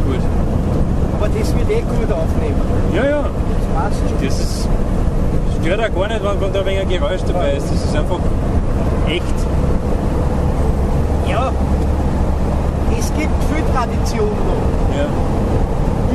A4 motorway, from bratislava to vienna
going with a truck driver from bratislava to vienna, talking about the gradual decay of the viennese suburban neighbourhood of stadlau, where he is native